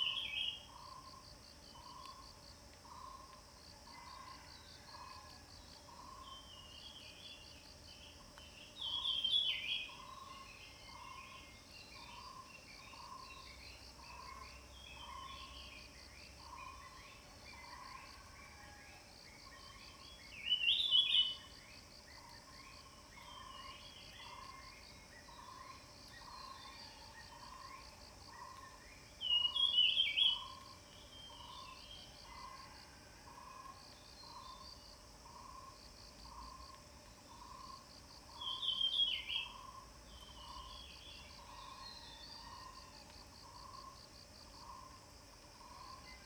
顏氏牧場, 埔里鎮桃米里 - In the morning
birds sound
Zoom H2n MS+XY
Puli Township, 水上巷28號